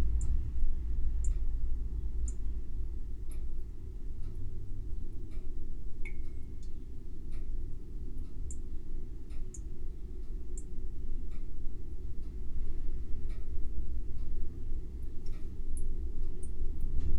water filter in 3 parts - water filter part 2
Part 2 This is a 2 hour 30 min recording in 3 parts.
The water filter is protagonist with squealing tight throat to lush fat, sonority, while the ensemble ebbs and flows in this rich, bizarre improvisation: the grandfather clock measures; the pressure cooker hisses and sighs; the wind gathers pace to gust and rage; vehicles pass with heavy vibration; the Dunnock attempts song from the rambling rose; the thermostat triggers the freezer’s hum; children burst free to the playground; a boy-racer fancies his speed; rain lashes and funnels from the roof; a plastic bag taunts from its peg on the line, as the wind continues to wuther.
Capturing and filtering rain water for drinking is an improvement on the quality of tap water.